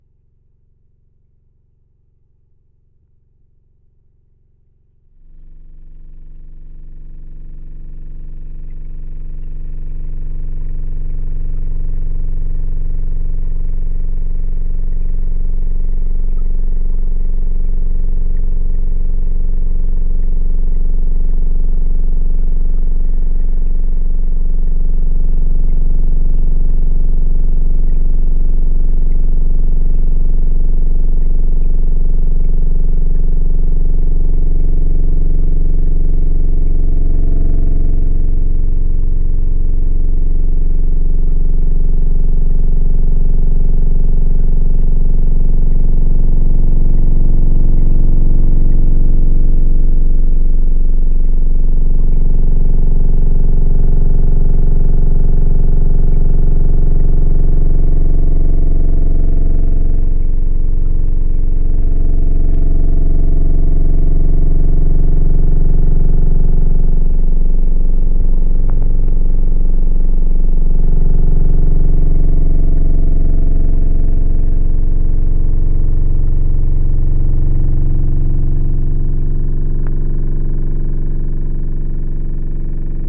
Bonaforth Schleuse Kanurutsche Motor
today the motor which is moving the floodgate for the canoes doesn stop working when the gate is closed. The metal is vibrating all the time causing standing wave lines on the water. Hydrophone + ZoomH4